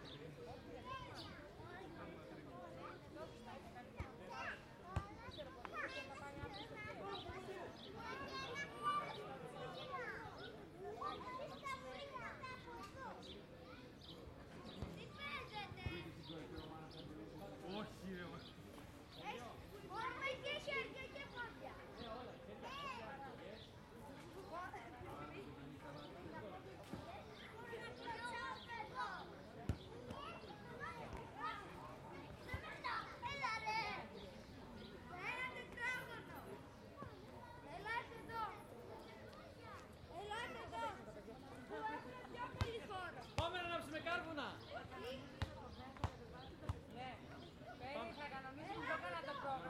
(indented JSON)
{
  "title": "Ικονίου, Λυκούργου Θρακός και, Ξάνθη, Ελλάδα - Park Megas Alexandros/ Πάρκο Μέγας Αλέξανδρος- 12:30",
  "date": "2020-05-12 12:30:00",
  "description": "Kids playing, people talking distant.",
  "latitude": "41.14",
  "longitude": "24.89",
  "altitude": "72",
  "timezone": "Europe/Athens"
}